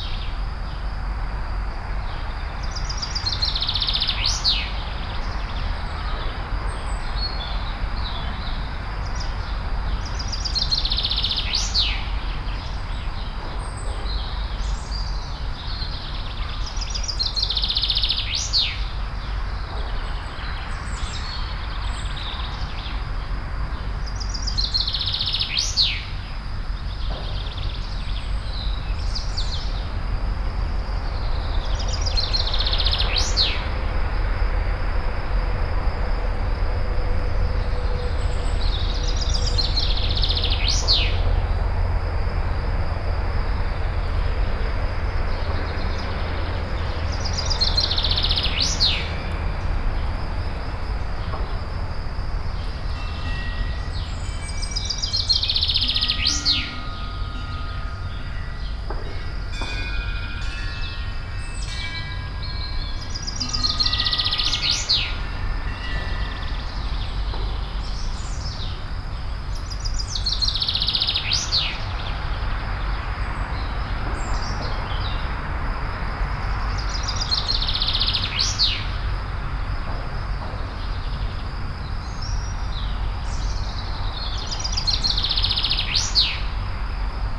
A39 highway, Aire du Jura under the trees.
France